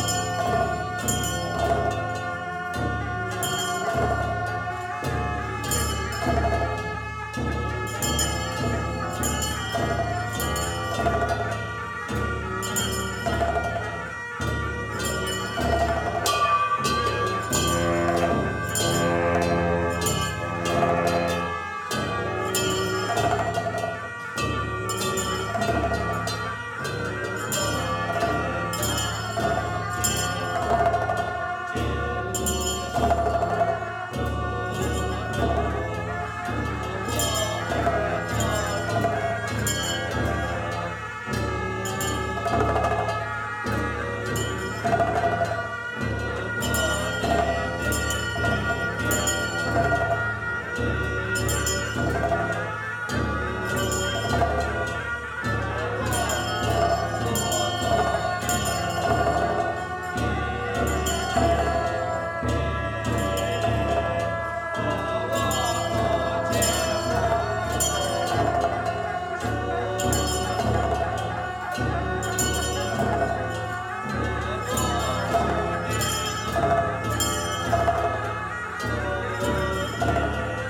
Bhutan - Rimdro
Mang gi Rimdro(puja), Dho Jaga Lama, Phaduna DSP center, Choki Yoezer, M-5